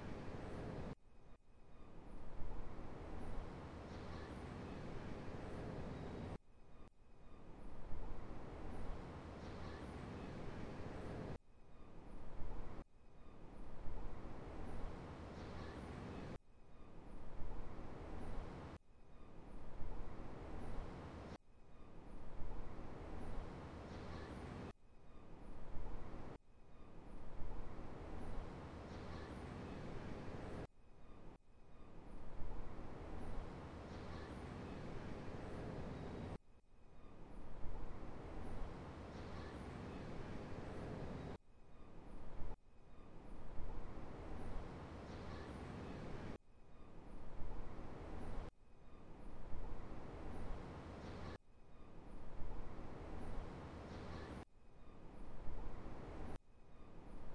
Cabo Branco, João Pessoa - Paraíba, Brésil - Cabo Branco, Spring Sunday Morning
Cabo Branco, Spring (October), Sunday morning. Recorded from my varando at ca 50 m. from Avenida Cabo Branco and seaside.
João Pessoa - Paraíba, Brazil, 28 October 2012